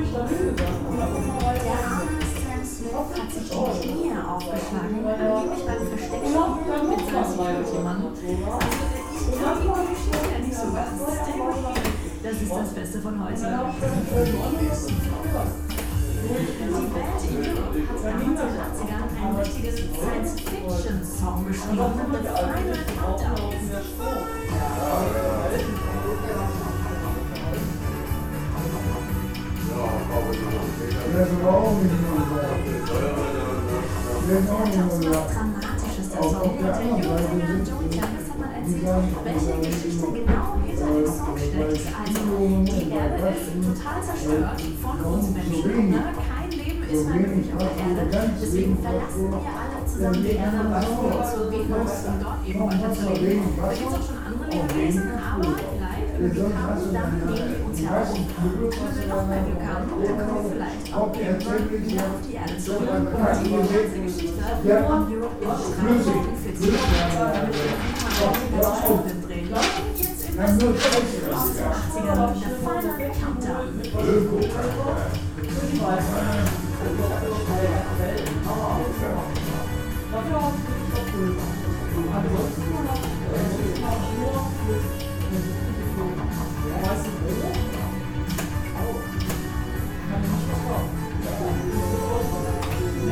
Altenessen - Süd, Essen, Deutschland - marktklause
marktklause, vogelheimer str. 11, 45326 essen
Essen, Germany, 31 August 2015